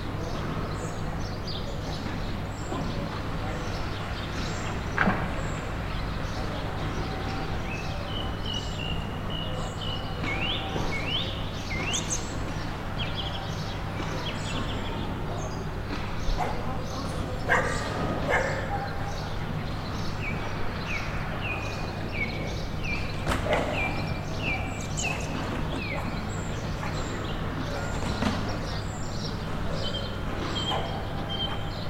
Outside the baker's
Captation ZOOM H6